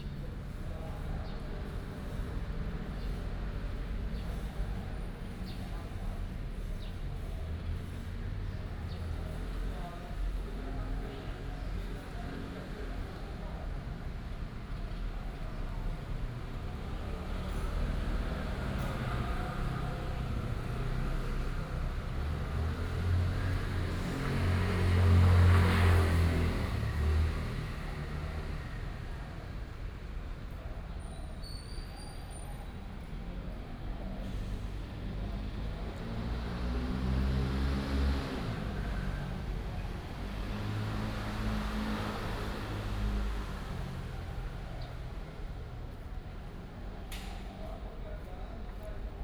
{
  "title": "四維公園, Da'an District, Taipei City - Park after the rain",
  "date": "2015-07-23 17:40:00",
  "description": "Park after the rain, Traffic Sound, Birds",
  "latitude": "25.03",
  "longitude": "121.55",
  "altitude": "19",
  "timezone": "Asia/Taipei"
}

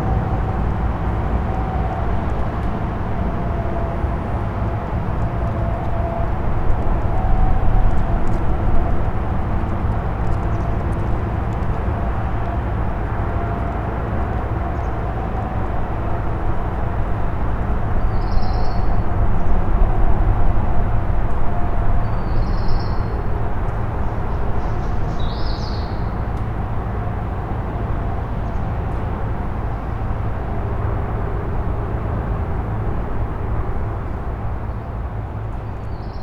{
  "title": "marienborn: gedenkstätte deutsche teilung - borderline: memorial of the german division",
  "date": "2011-05-09 15:54:00",
  "description": "formerly border checkpoint helmstedt marienborn named \"grenzübergangsstelle marienborn\" (border crossing Marienborn) by the german democratic republic\nborderline: may 9, 2011",
  "latitude": "52.21",
  "longitude": "11.08",
  "timezone": "Europe/Berlin"
}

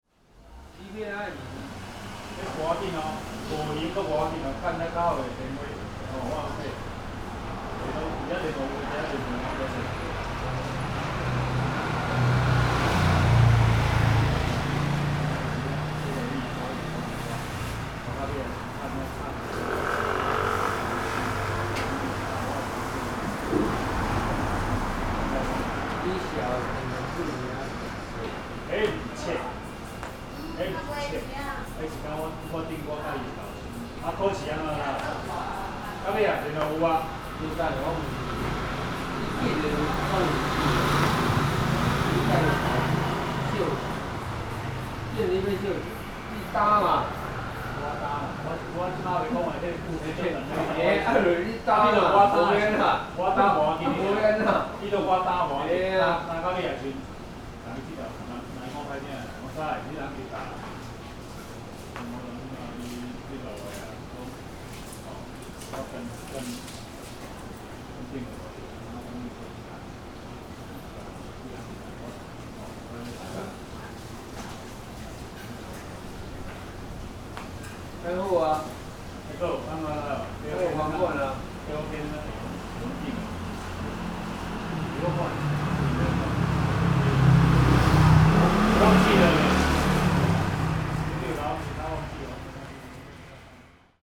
{
  "title": "Zhonghe St., Beitou Dist., Taipei City - Late at night",
  "date": "2012-04-29 01:37:00",
  "description": "Late at night, Dialogue at the roadside and traffic noise, Sony PCM D50",
  "latitude": "25.14",
  "longitude": "121.50",
  "altitude": "26",
  "timezone": "Asia/Taipei"
}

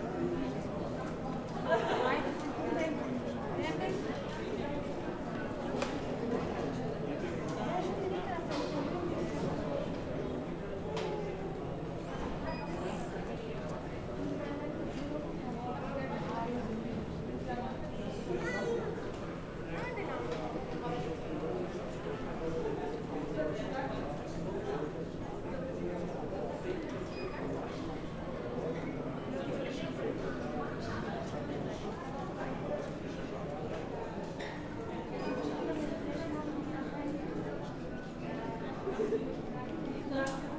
{
  "title": "Frankfurt Aéroport, Flughafen Frankfurt am Main, Frankfurt am Main, Deutschland - Halle C, September 2020",
  "date": "2020-09-08 16:20:00",
  "description": "The last hall in a long row of entrance halls at Frankfurt Airport, FRA. Compared to may 2020 the whole airport was busy, even if Terminal 2 was still closed. A lot of travellers to Turkey gathered and are audible, two workers are discussing a construction. The whole hall rather reminds of a mixture of a factory and a modern, concrete church.",
  "latitude": "50.05",
  "longitude": "8.58",
  "altitude": "112",
  "timezone": "Europe/Berlin"
}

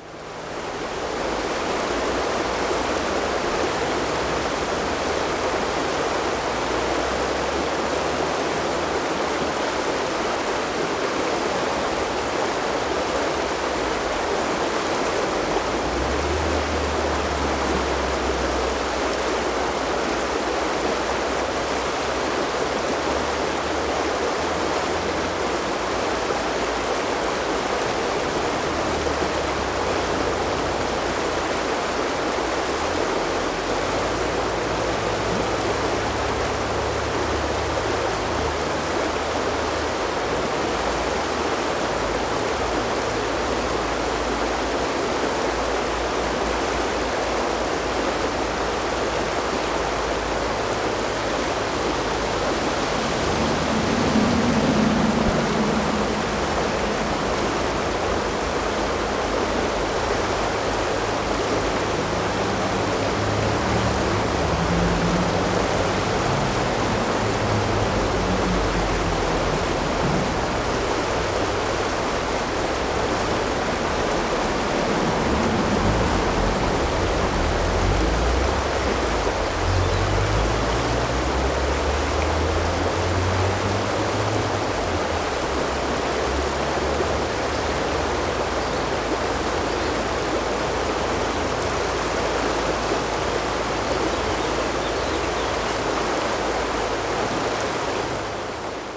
{
  "title": "eitorf - sieg, flußbiegung / river bend",
  "date": "2009-04-23 17:30:00",
  "description": "23.04.2009 17:30, wasserrauschen an einer flußbiegung der Sieg, sound of flowing water of river Sieg",
  "latitude": "50.78",
  "longitude": "7.43",
  "altitude": "91",
  "timezone": "Europe/Berlin"
}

